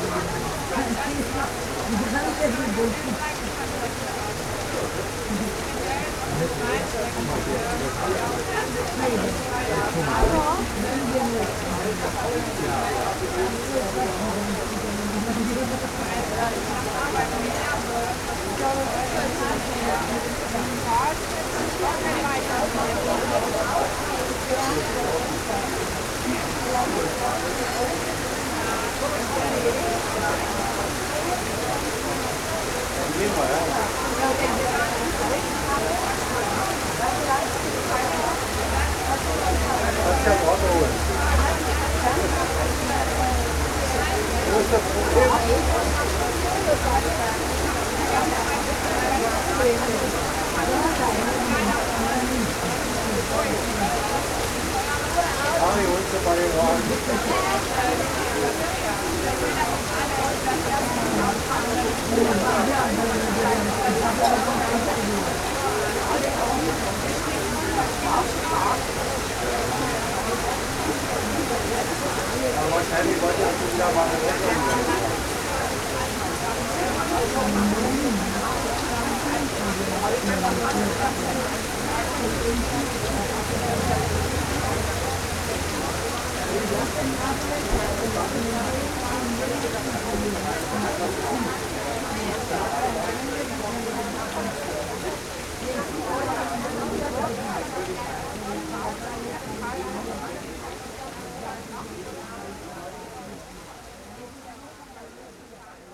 berlin, kottbusser damm: ankerklause, terrasse - the city, the country & me: terrace of a pub
rain hitting the plastic roof after thunderstorm
the city, the country & me: july 7, 2012
99 facets of rain